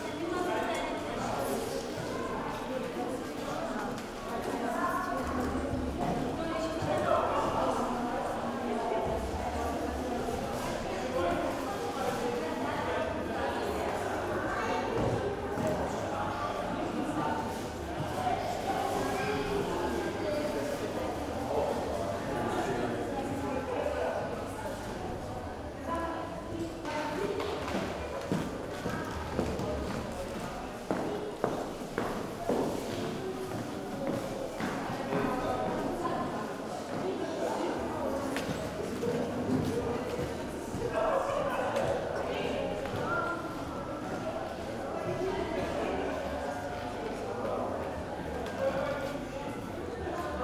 Berlin, Urbanstr., Nachbarschaftshaus - inner hall ambience
Sommerfest Nachbarschaftshaus (summer party at neighbourhood house), people of all ages from the neighbourhood gather here, the building also hosts a kindergarden. inner hall ambience.
Berlin, Germany, June 2011